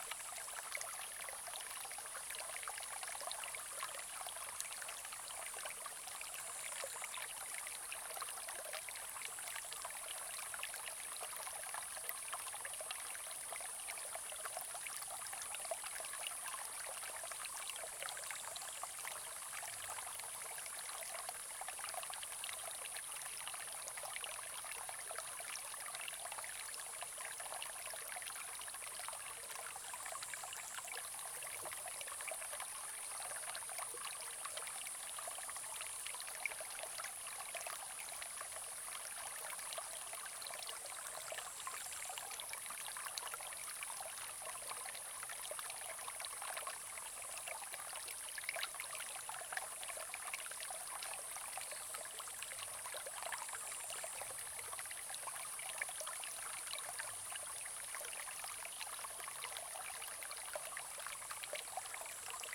種瓜坑溪, 成功里 - Bird and stream sounds
Small streams, In the middle of a small stream, Bird sounds
Zoom H2n Spatial audio